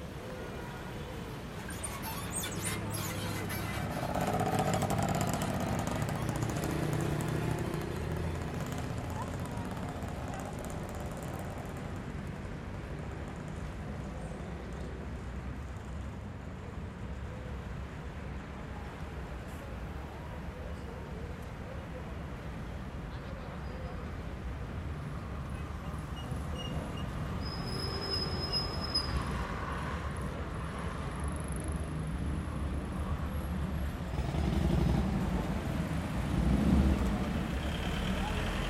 Μιχαήλ Καραολή, Ξάνθη, Ελλάδα - Mpaltatzi Square/ Πλατεία Μπαλτατζή 09:45
Mild traffic, people passing by, talking.